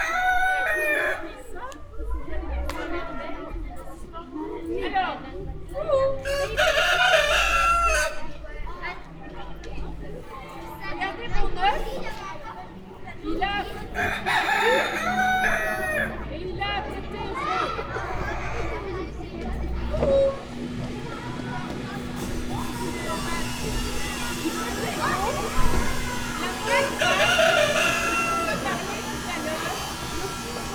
Quartier du Biéreau, Ottignies-Louvain-la-Neuve, Belgique - Children course
On the "place des sciences", a professor is giving a course about hens and roosters to very young children. The area is very noisy due to works.